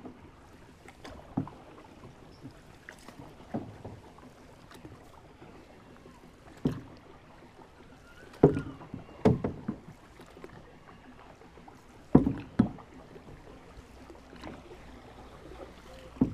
Hamburg Alster - Paddeling with a canoo
Paddeling with a canoo in Hamburg